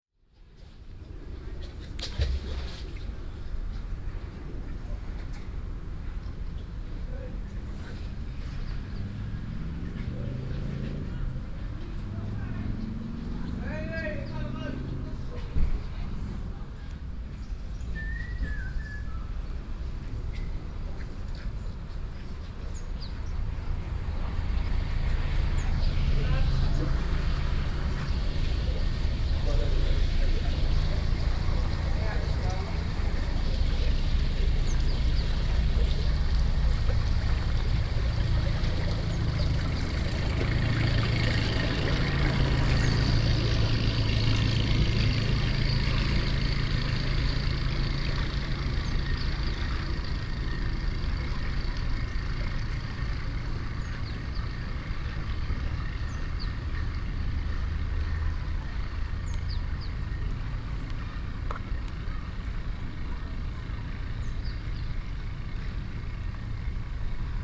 bootjes komen uit het tunneltje
little boat coming out the tunnel
Leiden, The Netherlands, 3 September 2011, 18:30